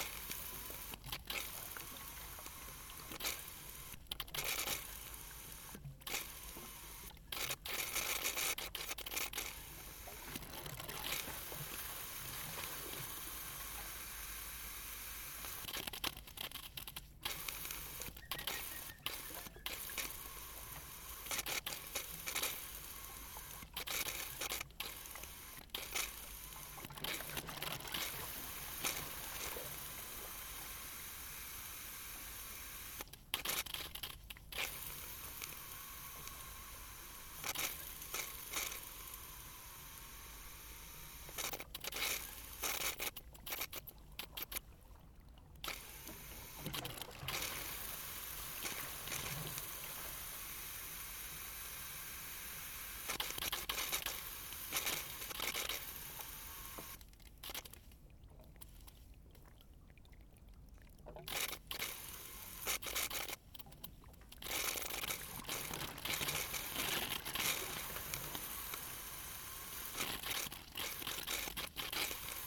Sint-Annabos, Antwerpen, België - Malfunctioning valve
[H4n Pro] Malfunctioning valve on a water pipeline through Sint-Annabos.
24 February 2019, ~13:00